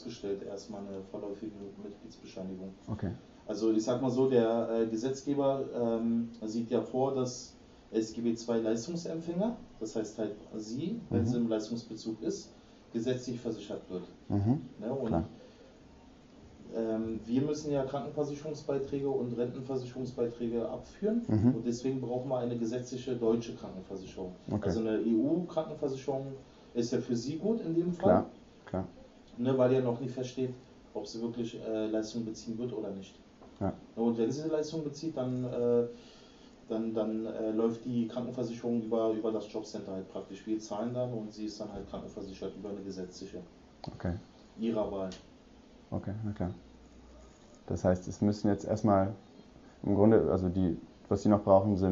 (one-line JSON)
{"title": "Jobcenter Berlin Mitte", "description": "Ein Antrag auf Arbeitslosengeld II für EU-Bürger im Rahmen des Hauptmann-von-Köpenick-Dilemmas. Ein Gespräch über das gut behütete Erbe preussischer Bürokratie.", "latitude": "52.51", "longitude": "13.40", "altitude": "37", "timezone": "Europe/Berlin"}